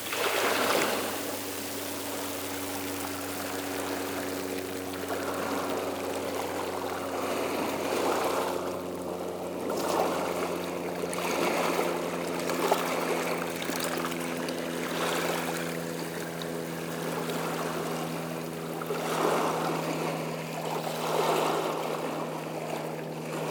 La Couarde-sur-Mer, France - The sea
The sea during the low tide on the small beach of La Couarde.